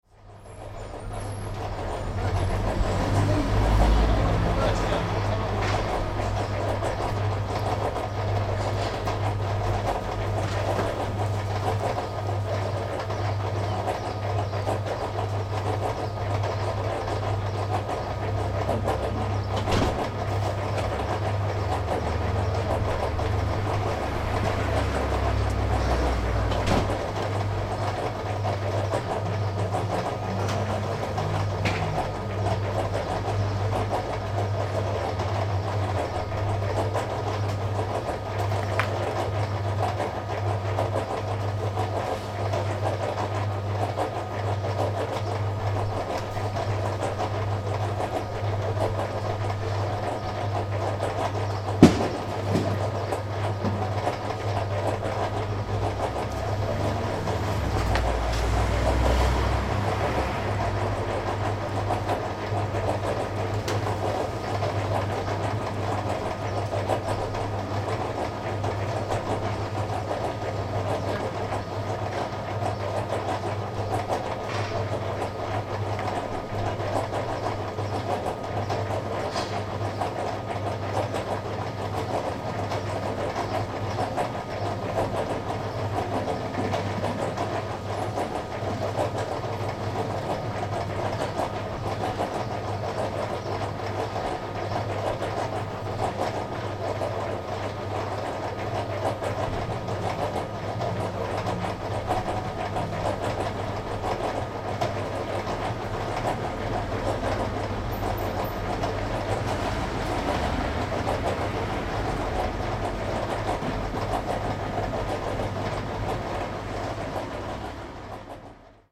berlin, bürknerstraße: fassadenarbeiten - the city, the country & me: concrete mixer
mischmaschine auf dem bürgersteig / concrete mixer on the pavement
the city, the country & me: may 12, 2009
Berlin, Germany, 12 May 2009, ~09:00